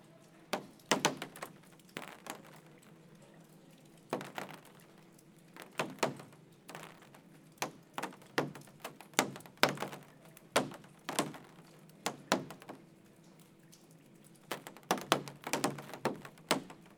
Santo Estêvão, Portugal - rain on plastic rooftop
rain drops in plastic rooftop, recorder zoom H4n, internals XY 90º
Lisbon, Portugal, 18 January 2014, ~03:00